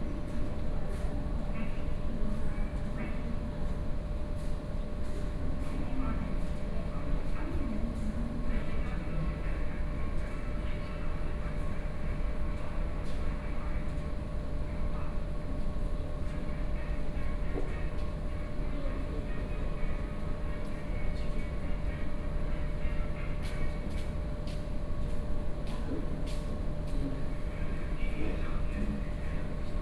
Touqianzhuang Station - Island platform
in the Touqianzhuang Station platform, Sony PCM D50 + Soundman OKM II